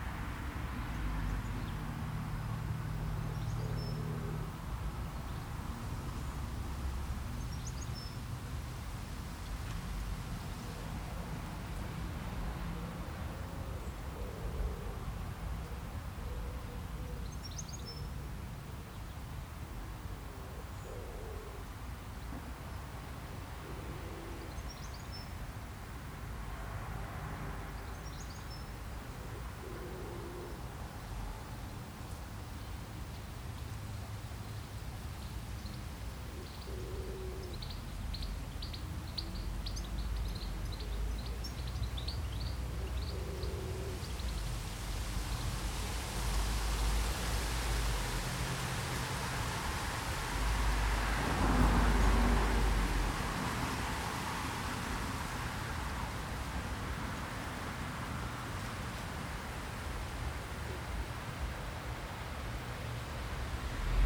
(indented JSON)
{
  "title": "Memorial Garden, St Leonard's Church, Woodcote, Oxon - St Leonard's Memorial Garden",
  "date": "2017-07-31 12:25:00",
  "description": "A twenty minute meditation sitting beside the memorial garden at St Leonard's Church in Woodcote. Recorded using the built-in microphones of a Tascam DR-40 as a coincident pair.",
  "latitude": "51.53",
  "longitude": "-1.07",
  "altitude": "162",
  "timezone": "Europe/London"
}